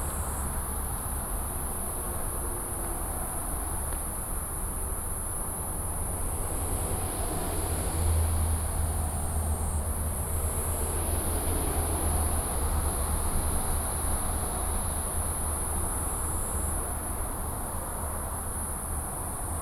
中山高速公路, Hukou Township - Insects and traffic sound
Insects and traffic sound, Next to the highway